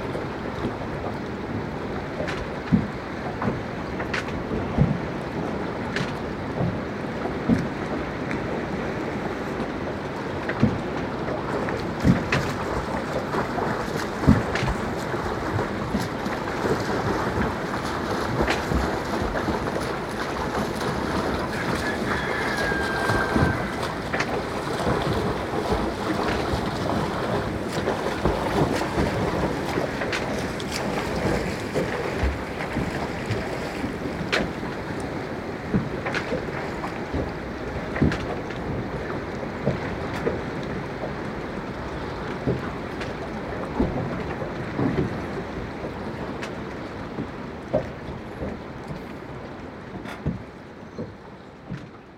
Early morning recording from a boat at the bay - rooster, waves splashes, some jumps, and swims...
AB stereo recording (17cm) made with Sennheiser MKH 8020 on Sound Devices MixPre-6 II.
Ege Bölgesi, Türkiye, 19 September 2022